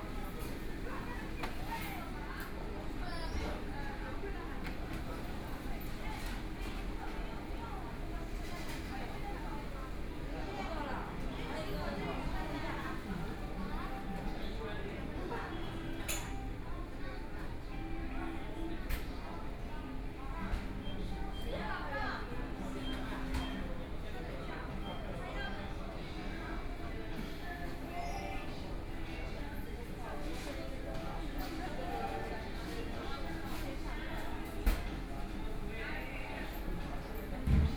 五角場, Shanghai - Fast food（KFC）
sitting in the Fast food(KFC), The crowd, Binaural recording, Zoom H6+ Soundman OKM II
Shanghai, China, 22 November 2013